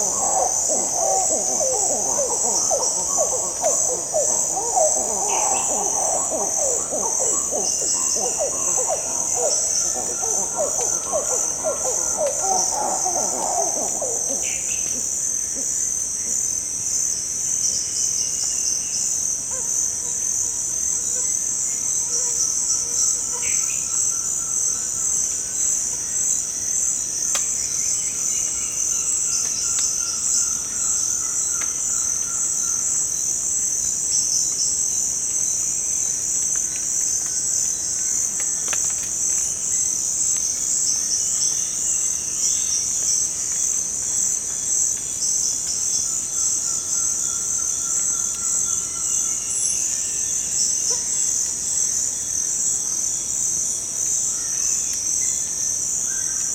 Tauary, Amazonas - Zogzog monkey yelling in the Amazonian Rainforest
In the surroundings of the small village of Tauary (close to Tefé, Amazonas) some zogzog monkey are yelling in the trees.
ORTF Setup Schoeps CCM4 x 2
Recorder Sound Devices 833
GPS: -03.655211, -64.938757
Ref: BR-200215T12